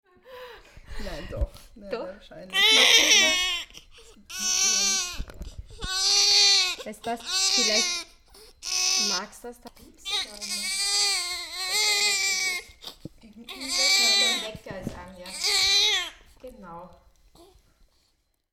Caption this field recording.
14.09.2008, 23:58 little Franca is born, second cry